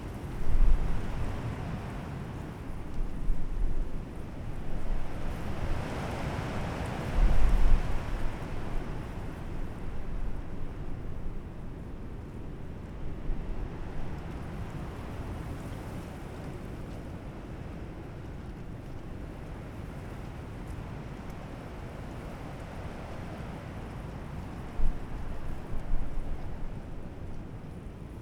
during storm
the city, the country & me: march 7, 2013
Mecklenburg-Vorpommern, Deutschland, European Union